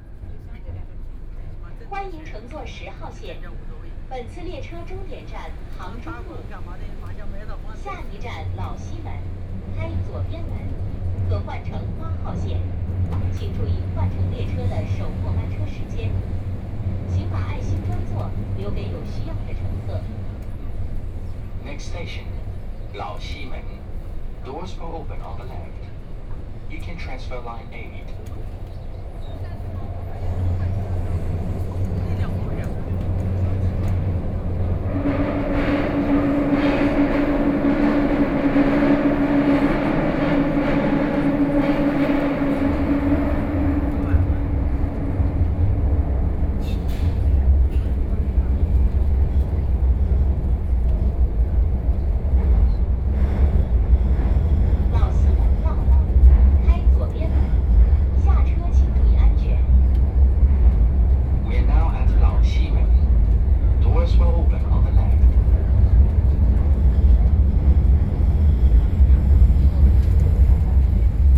Huangpu District, Shanghai - Line 10 (Shanghai Metro)
from Yuyuan Garden Station to South Shaanxi Road Station, Binaural recording, Zoom H6+ Soundman OKM II
3 December, ~14:00